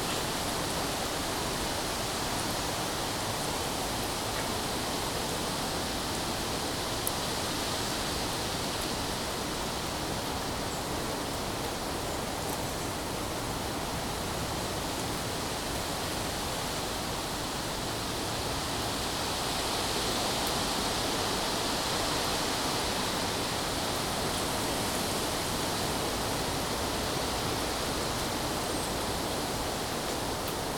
Krumhornet, Östersund, Sverige - Krumhornet backyard
The wind in the trees. Birds busy. Definitively more than two, maybe four, more?
The calmness. The waves of winds coming and going. It´s not warm, but neither cold. Good I have a jacket on. That tree in the middle, why has it that leaning pole? The common fields behind. Children must love it here. But not today. There´s a plane in the sky. With people. Where do they come from? Tourists, going to the high mountains? Focused listening, global listening. I lose my focus often. It is calm and safe here. More warm inside of course, but I will come back there soon. Good with the air here in Jämtland. Breathing the air from the mountains. Good. Keep doing it. Listening. Recording. Now waves again. Am I at the sea? The crow reminds me not. But definitely calm waves. Of wind. In the trees.
Jämtlands län, Norrland, Sverige, 30 July, 14:11